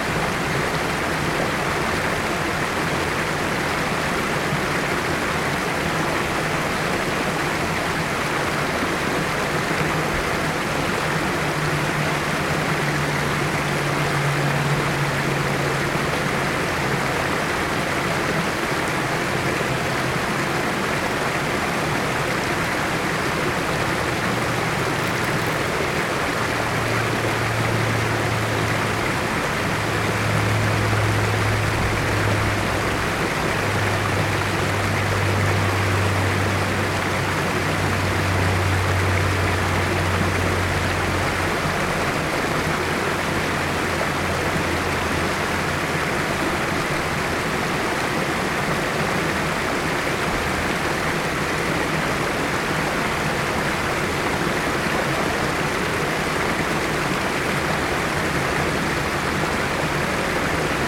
cascade du Sierroz, Aix-les-Bains, France - Passe à poissons
Avec la sécheresse le Sierroz est au plus bas et tout le flux d'eau passe uniquement par la passe à poissons aménagée à cet endroit près du pont du Bd Garibaldi. Quelques sons graves surnagent au dessus du bruit de l'eau, avion circulation automobile proche.